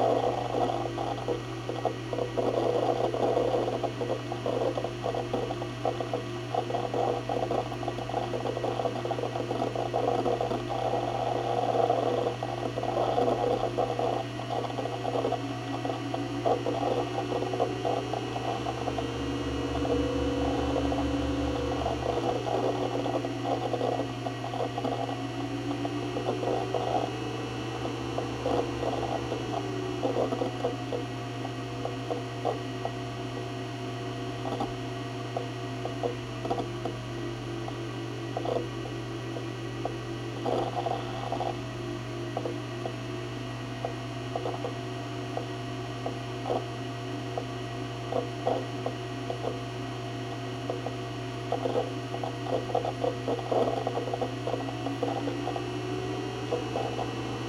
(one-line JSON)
{"title": "Ln., Sec., Zhongyang N. Rd., Beitou Dist - Open the host computer", "date": "2013-12-27 21:41:00", "description": "Sound computer's hard drive, Zoom H6", "latitude": "25.14", "longitude": "121.49", "altitude": "23", "timezone": "Asia/Taipei"}